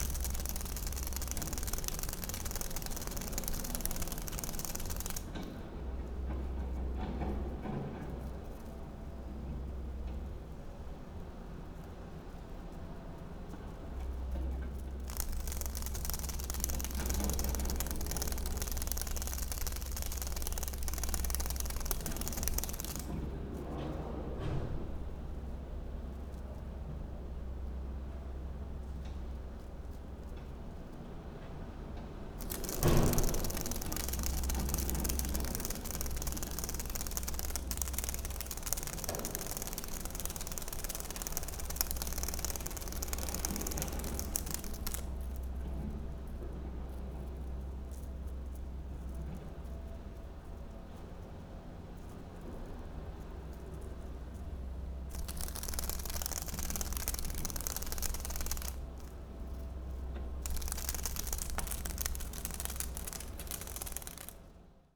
tallinn, kultuurikatel, upper floor, half dead butterfly at window